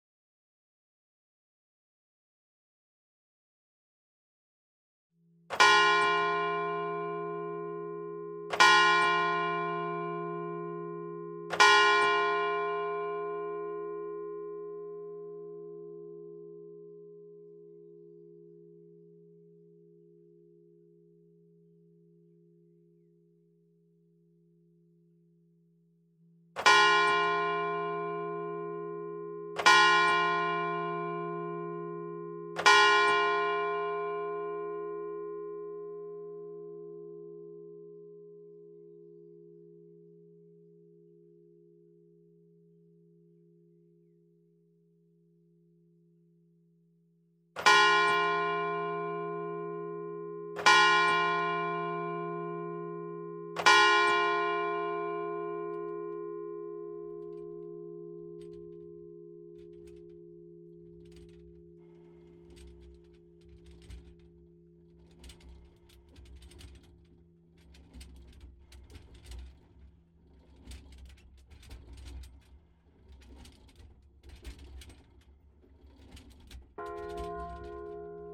Allée de la La Chartreuse, Neuville-sous-Montreuil, France - Neuville sous Montreuil - Angélus - volée
Neuville sous Montreuil
Clocher de la chartreuse de Neuville
Angélus - volée